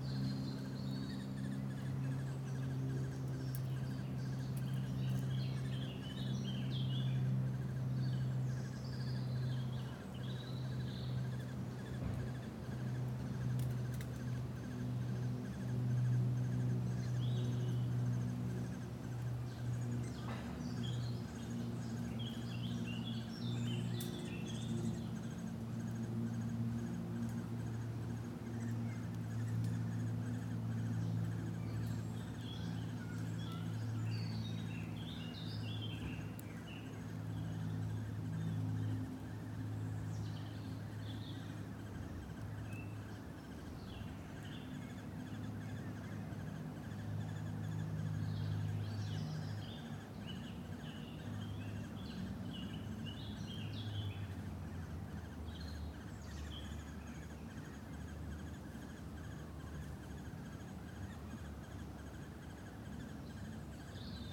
Wooded area, Reading University Campus, Reading, UK - Baby woodpeckers?
I have recently discovered a beautiful pocket of woodland at the fringes of the Harris Gardens in the grounds of Reading University and I wanted to go there to listen to the sounds of the birds who are all very busily chirruping away just now with their babies and their nests. I found a nice clearing, resonant with the songs of birds, but then became aware of something – amplified through my microphones – high up and high pitched in the trees. Luckily I was recording using Chris-Watson’s pro-tip to attach two omni-directional microphones to a coathanger. This gives you a lovely stereo impression of ambient sound, but it also means that when you hear a difficult-to-access sound that is high up, you can wedge your coathanger on a stick and poke it up to the source. That is what I did, standing in a clearing still and near to a tree, watched over by two anxious woodpeckers. I think this sound is what I heard – the sound of their babies in a nest inside the tree. Isn’t it amazing?